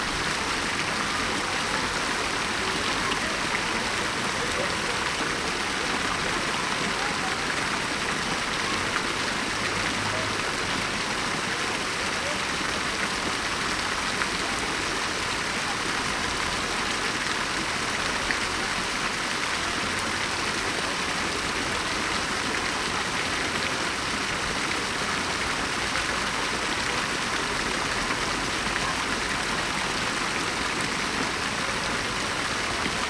Fontaine square Louis XIII Paris
Place des Vosges - Paris
Square Louis XIII
Paris, France, 6 April 2011